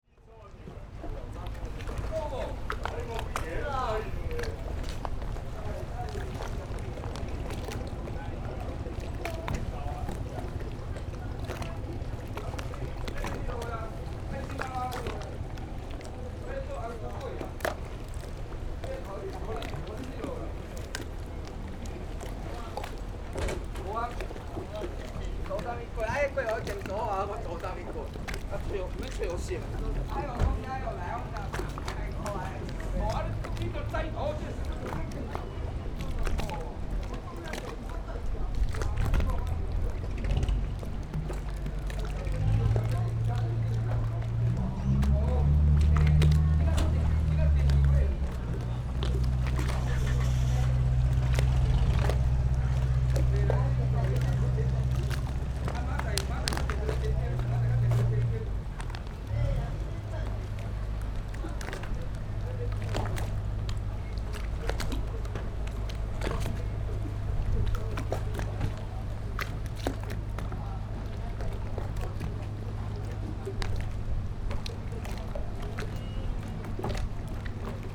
Tide, Quayside, Small pier
Zoom H6 + Rode NT4
Penghu County, Baisha Township, 22 October 2014